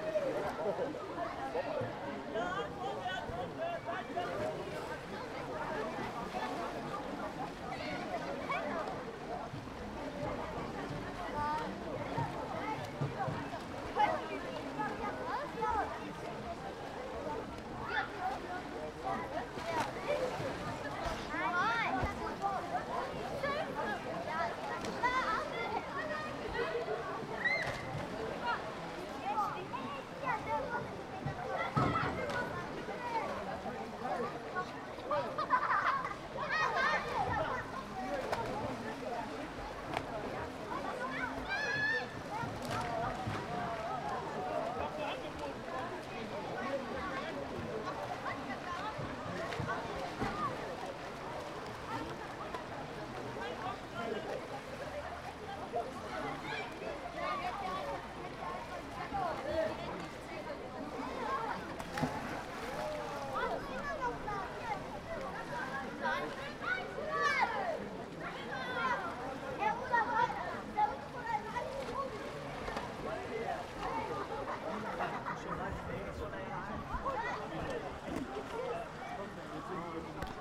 Open Air Bath, Neviges - open Air Bath, Neviges
Saturday evening at the open air bath, Neviges